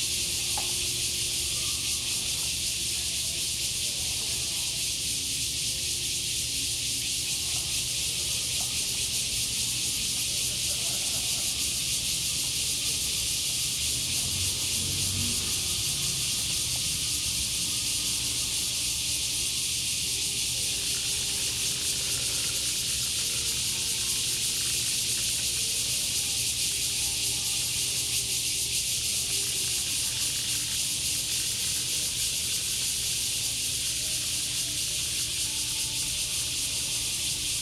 榕堤, Tamsui District 新北市 - Sitting next to the riverbank
Sitting next to the riverbank, Bird calls, Cicadas cry, Traffic Sound, There are fishing boats on the river
Zoom H2n MS+XY